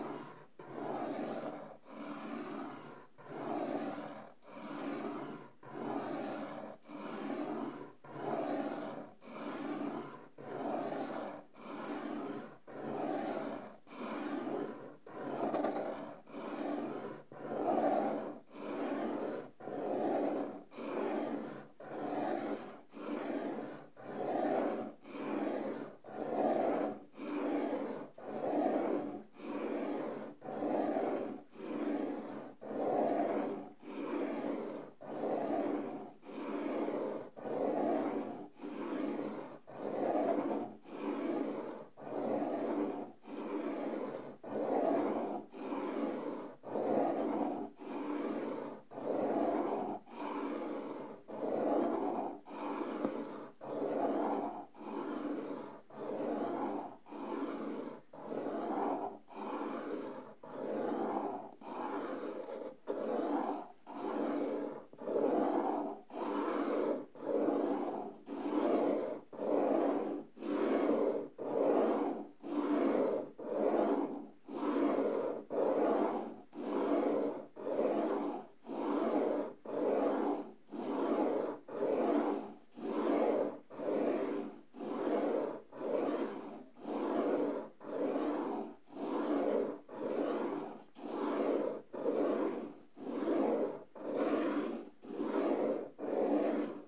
recording made while making drawing number 16 pen on paper